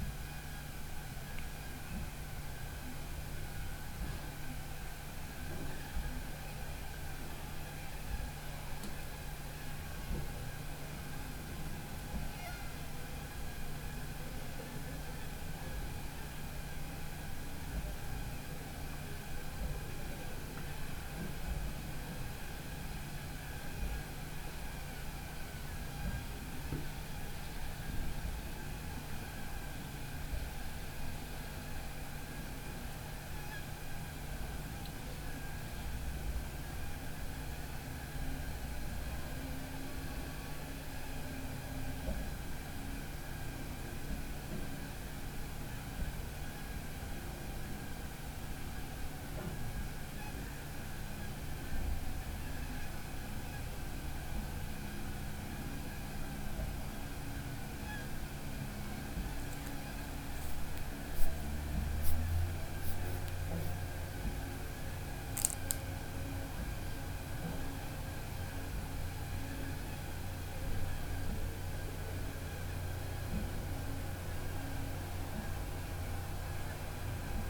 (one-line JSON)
{"title": "Lake View, Sinazongwe, Zambia - sounds in my room after dark...", "date": "2016-08-16 19:17:00", "description": "...closed doors and windows are not something I consider appealing… even though the result may be - not only acoustically, a bit worrying…. first, you'll mainly hear the alarmingly high-pitch sounds of insects... then motor sounds from the rigs on the lake begin mixing in…", "latitude": "-17.26", "longitude": "27.48", "altitude": "498", "timezone": "GMT+1"}